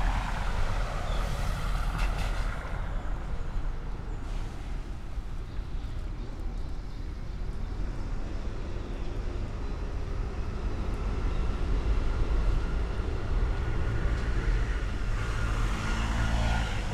Voetgangersbrug Trekvlietplein Bontekoekade, Trekvlietplein, Den Haag, Netherlands - Trekvlietplein canal footbridge, Den Haag
Trekvlietplein canal footbridge, Den Haag